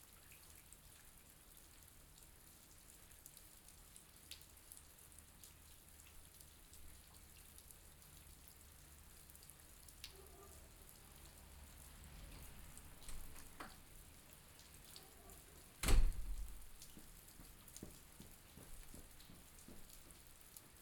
Raining in Ourense (Spain). Recording made at a backyard on christmas eve.